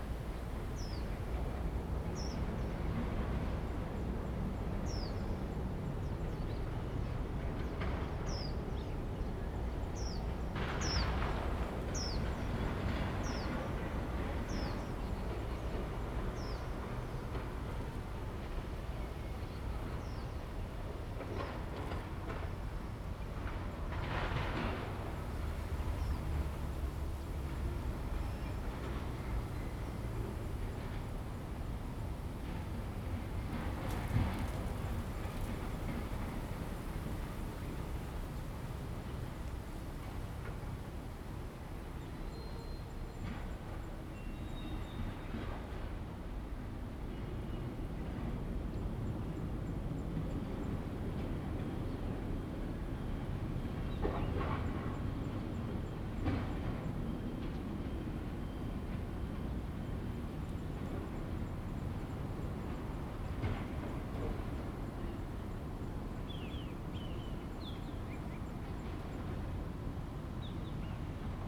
中華路, Dayuan Dist., Taoyuan City - Basketball court
The sound of construction, The plane flew through, traffic sound, bird, Zoom H2n MS+XY